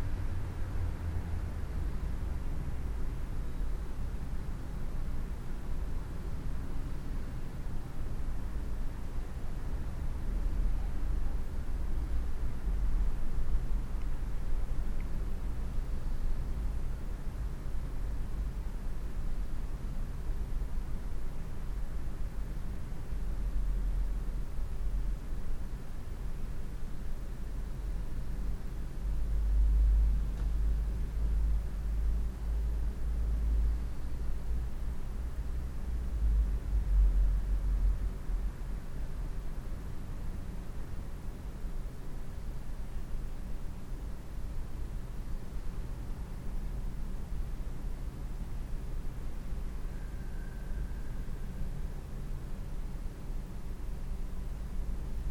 cologne, inside minoriten kirche, silence
inside the church in the afternoon - silence surrounded by the dense city traffic noise
social ambiences/ listen to the people - in & outdoor nearfield recordings
29 September, ~18:00